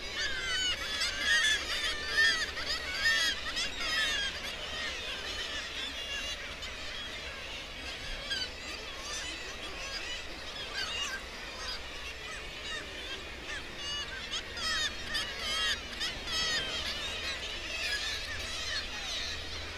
Bempton, UK - Kittiwake soundscape ...
Kittiwake soundscape ... RSPB Bempton Cliffs ... kittiwake calls and flight calls ... gannet and guillemot calls ... lavalier mics on T bar on the end of a fishing landing net pole ... warm ... sunny morning ...